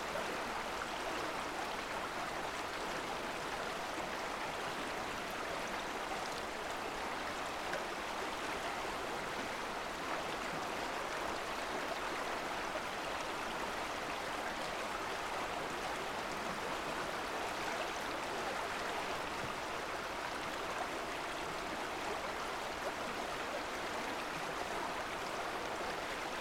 Thunder Creek Bridge - Water Flowing Under Thunder Creek
Thunder Creek flows into Diablo Lake, a man made lake in the North Cascades National Park.
At the time of the recording this section of the park was nearly empty of human visitors, the cool and damp conditions had resulted in considerable blooms of hundreds of species of mushrooms.